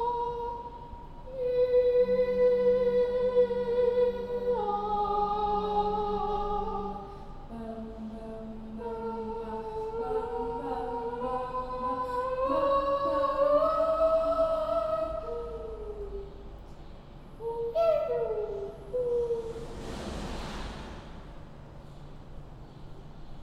loading... - 2 students singing in a hall
מחוז ירושלים, ישראל, 30 April 2018, 12:40